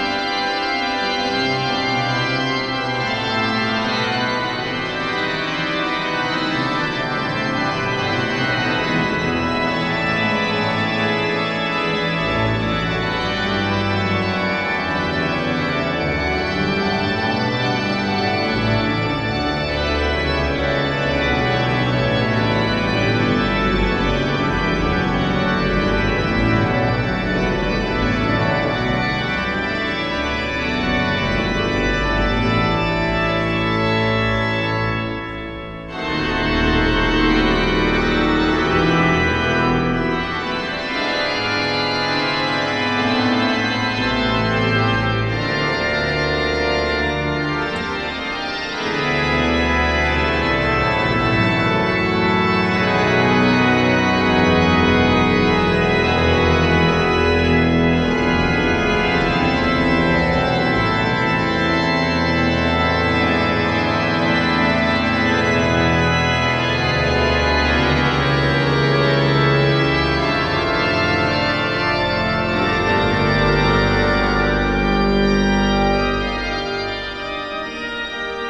Organ concert Marienkirche - 7/7 Organ concert Marienkirche
07 Johann Sebastian Bach_ Prelude D-Dur